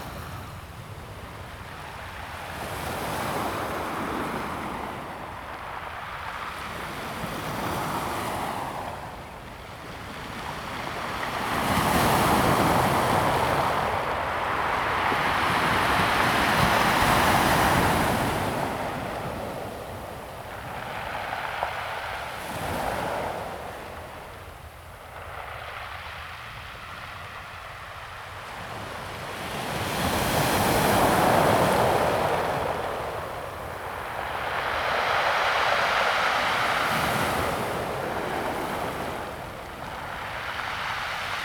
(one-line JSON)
{"title": "Qixingtan Beach, Xincheng Township - the waves", "date": "2016-07-19 14:27:00", "description": "sound of the waves\nZoom H2n MS+XY +Sptial Audio", "latitude": "24.04", "longitude": "121.62", "altitude": "6", "timezone": "Asia/Taipei"}